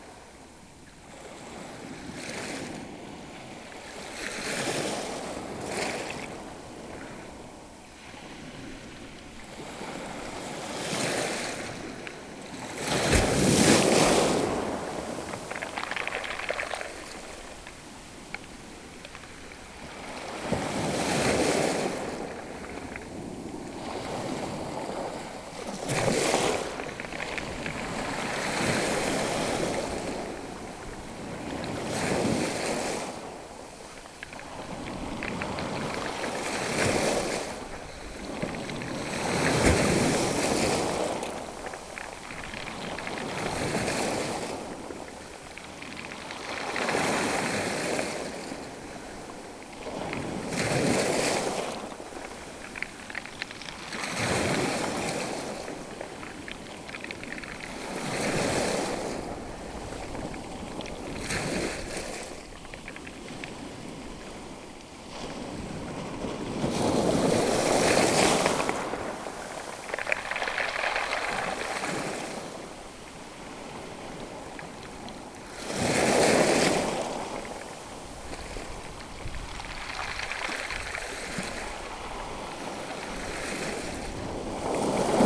Cayeux-sur-Mer, France - The sea in Cayeux beach
Sound of the sea, with waves lapping on the gravels, in Cayeux. It's a shingle beach. This is the end of the high tide with small waves.
This sound is an anniversary. It's exactly now the 100 days of radio Aporee.
♪ღ♪*•.¸¸¸.•*¨¨*•.¸¸¸.•*•♪ღ♪¸.•*¨¨*•.¸¸¸.•*•♪ღ♪•*•.¸¸¸.•*•♪ღ♪
♪ღ♪░H░A░P░P░Y░ B░I░R░T░H░D░A░Y░░♪ღ♪
*•♪ღ♪*•.¸¸¸.•*¨¨*•.¸¸¸.•*•♪¸.•*¨¨*•.¸¸¸.•*•♪ღ♪••.¸¸¸.•*•♪ღ♪¸.
______(¯`v´¯)_______(¯`v´¯) Thank you udo
____ (¯`(✦)´¯) _____(¯`(✦)´¯)Thank you udo
___¶¶ (_.^._)¶¶___¶¶¶(_.^._)¶¶ Thank you udo
_¶¶¶¶¶¶¶¶¶¶¶¶¶_¶¶¶¶¶¶(¯`v´¯)¶¶Thank you udo
¶¶¶¶¶¶¶¶¶¶¶¶¶¶¶¶¶¶¶¶(¯`(✦)´¯)¶ Thank you udo
¶¶¶¶¶¶¶¶¶¶¶¶¶¶¶¶¶¶¶¶¶(_.^._)¶¶¶Thank you udo
¶¶¶¶¶¶¶¶¶¶¶¶¶¶¶¶¶(¯`v´¯)¶¶¶¶¶¶ Thank you udo
_¶¶¶¶¶¶¶¶¶¶¶¶¶¶¶(¯`(✦)´¯)¶¶¶¶Thank you udo
___¶¶¶¶¶¶¶¶¶¶¶¶¶¶(_.^._)¶¶¶¶Thank you udo
_____¶¶¶¶¶¶¶(¯`v´¯)¶¶¶¶¶¶¶Thank you udo
_______¶¶¶¶(¯`(✦)´¯)¶¶¶¶Thank you udo
_________¶¶¶(_.^._)¶¶¶Thank you udo
___________¶¶¶¶¶¶¶¶Thank you udo
______________¶¶¶Thank you udo